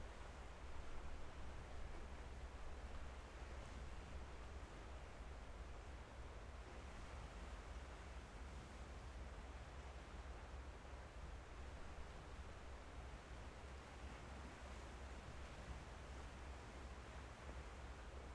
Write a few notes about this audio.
On the coast, Birds singing, Sound of the waves, Zoom H6 XY